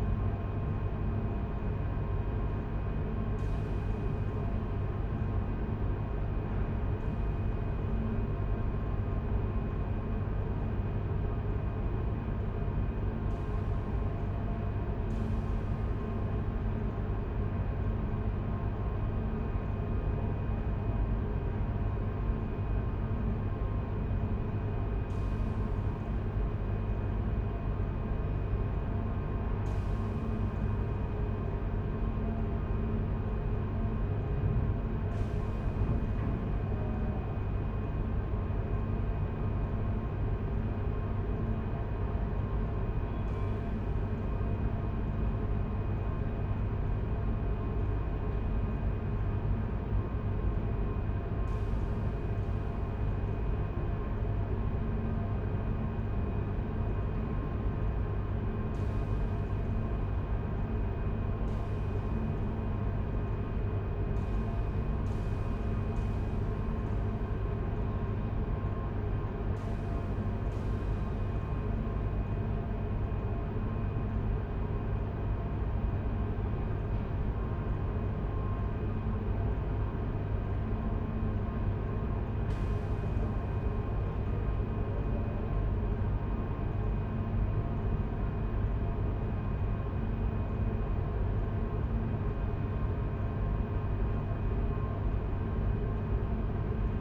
Stadt-Mitte, Düsseldorf, Deutschland - Düsseldorf, Schauspielhaus, big stage
On the big stage of the theatre.
The sound of the stage and light ventilation. Some small accents by background steps and doors from the sideways.
This recording is part of the intermedia sound art exhibition project - sonic states